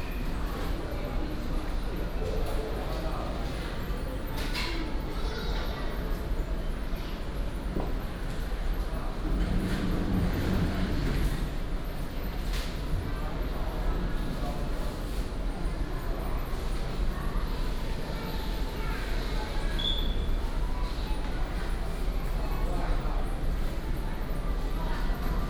In supermarkets
Binaural recordings
Sony PCM D100+ Soundman OKM II
Tamsui District, New Taipei City, Taiwan